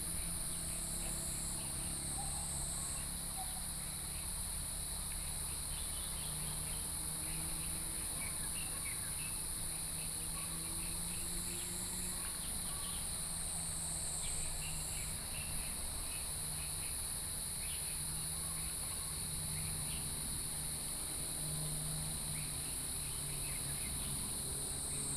土角厝水上餐廳, 埔里鎮桃米里 - A small village in the morning
Bird calls, Traffic noise, Stream, Outside restaurant, A small village in the morning
Puli Township, 水上巷, 12 June 2015